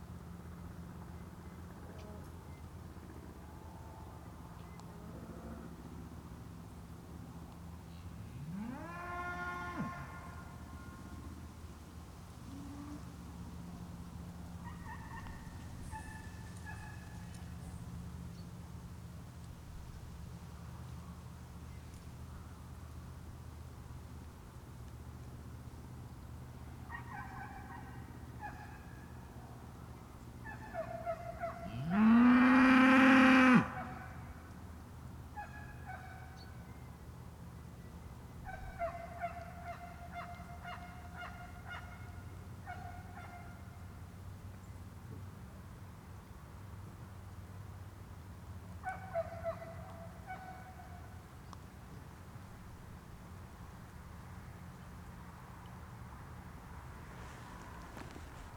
Piertanie, Suwałki, Polen - Piertanie, Suwałki - cows mooing, dog barking, rain setting in
Piertanie, Suwałki - cows mooing, dog barking, rain setting in. One car passing by. [I used Olympus LS-11 for recording]